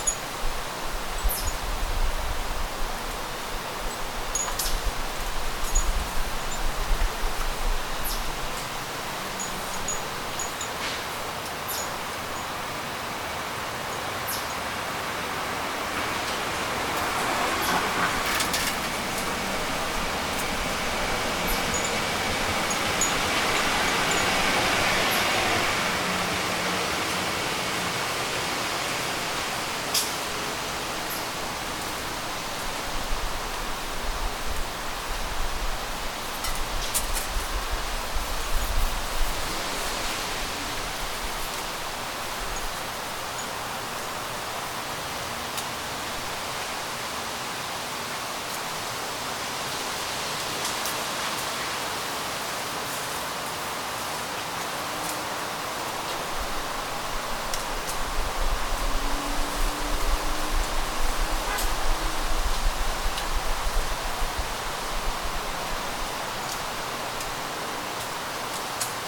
Suffex Green Lane, GA - Autumn Atmosphere
A recording made outside of an apartment on a beautiful, windy fall day. The recording includes leaves being blown about, nuts falling from trees, wind chimes, and, of course, vehicles driving through/past the neighborhood. The recording was made using a laptop, audacity, and a Samson Go mic, plus whatever wind protection I was using (probably no more than a simple pop filter, but I can't remember at this point). Recorded in mono.